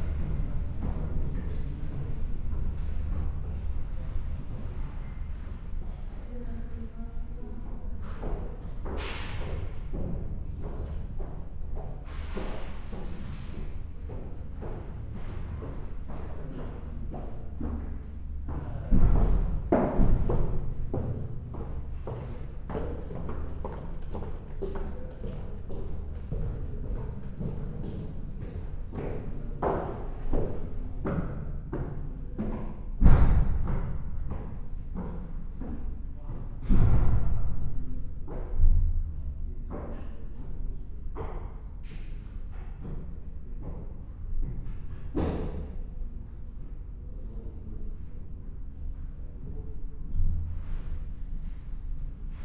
Passenger tunnel under the train
Recorded in a tunnel under the track as the train arrives and leaves. A few people leave by the tunnel.
MixPre 6 II with 2 Sennheiser MKH 8020s.